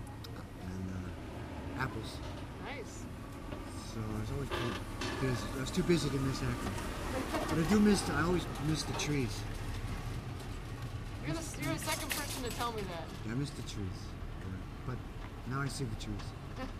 Highland Square Mustard Seed Parking Lot, Akron, OH, USA - Busker in Mustard Seed Market Parking Lot
Busker and Akronite, George, performs in the parking lot of neighborhood grocery store, Mustard Seed Market. You will hear cars, shopping carts, and people interacting with George. A short interview with George follows.